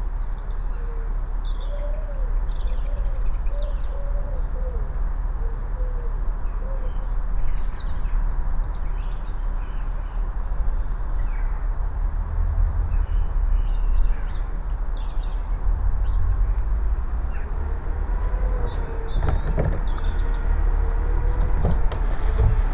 Plymouth, UK - Near playground, Kinterbury Creek